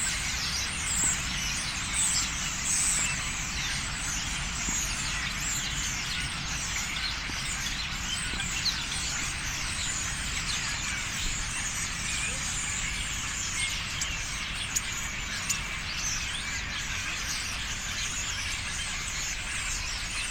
{
  "title": "Tempelhofer Feld, Columbiadamm, Berlin - a flock of starlings (Sturnus vulgaris) in a tree",
  "date": "2019-08-07 09:05:00",
  "description": "attracted by a flock of starlings (Sturnus vulgaris) in a tree chatting, at 2:50 they'll be gone in a rush. Further, sounds of a nearby tennis match and traffic noise from Columbiadamm\n(Sony PCM D50)",
  "latitude": "52.48",
  "longitude": "13.40",
  "altitude": "44",
  "timezone": "Europe/Berlin"
}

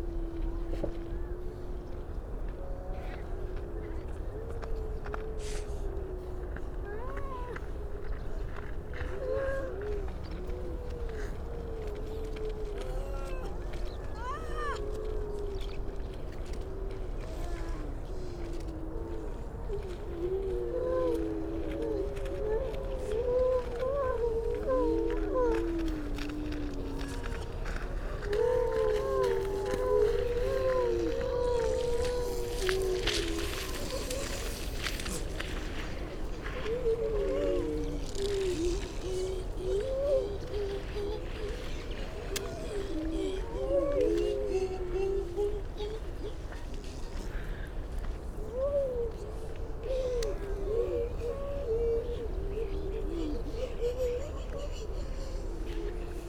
{
  "title": "Unnamed Road, Louth, UK - grey seal soundscape ...",
  "date": "2019-12-03 09:49:00",
  "description": "grey seal soundscape ... generally females and pups ... parabolic ... bird calls ... skylark ... starling ... pied wagtail ... pipit ... all sorts of background noise ...",
  "latitude": "53.48",
  "longitude": "0.15",
  "altitude": "1",
  "timezone": "Europe/London"
}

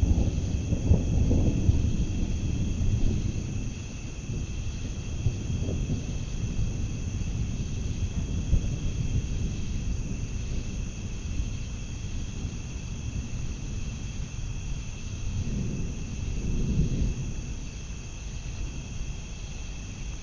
{
  "title": "Upper Deerfield Township, NJ, USA - approaching thunderstorm",
  "date": "2016-07-25 21:30:00",
  "description": "Approaching thunderstorm (good headphones or speakers needed to achieve base reproduction) with insects chanting and distant green frog gulping. Nearby road traffic. Lakeside recording.",
  "latitude": "39.45",
  "longitude": "-75.24",
  "altitude": "1",
  "timezone": "America/New_York"
}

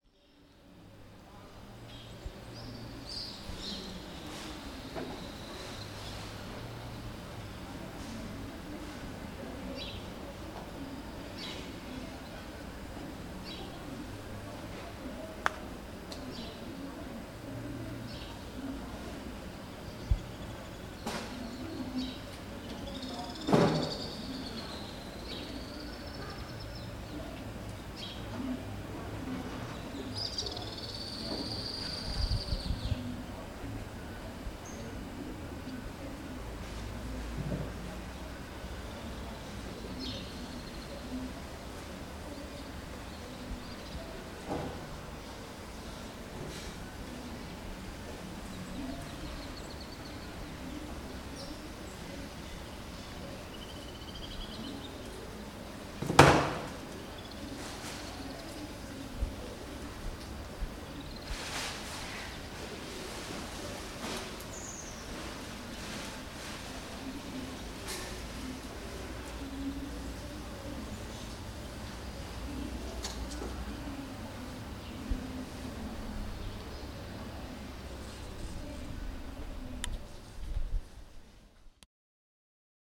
{
  "title": "Protopresvyterou, Corfu, Greece - Protopresvyterou Athanasiou Ch. Tsitsa Square - Πλατεία Πρωτοπρεσβύτερου Αθανάσιου Χ. Τσιτσά",
  "date": "2019-04-01 10:23:00",
  "description": "Locals chatting in the background under the birds's cheep.",
  "latitude": "39.63",
  "longitude": "19.92",
  "altitude": "19",
  "timezone": "Europe/Athens"
}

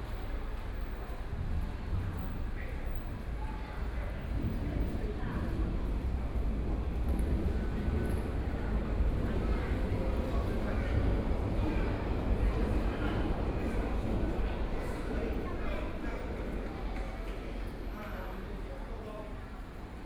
{
  "title": "Shilin Station, Taipei - Ambient sound in front of the station",
  "date": "2013-11-11 20:45:00",
  "description": "Ambient sound in front of the station, sitting in the MRT station entrance And from out of the crowd, MRT train stops on the track and off-site, Binaural recordings, Zoom H6+ Soundman OKM II",
  "latitude": "25.09",
  "longitude": "121.53",
  "altitude": "8",
  "timezone": "Asia/Taipei"
}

